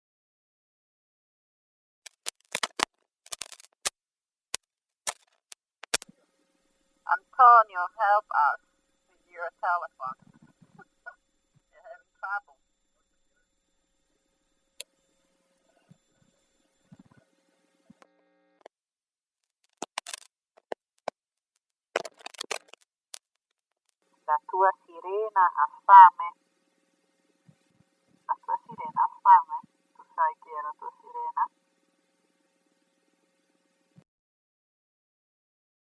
trying to contact Antonio Meucci through his telephone